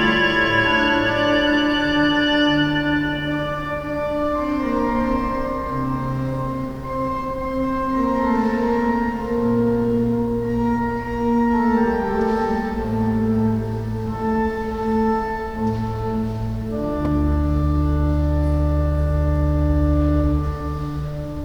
03 Antonio Vivaldi_ Adagio in D mol (Arranged for organ by J.S. Bach)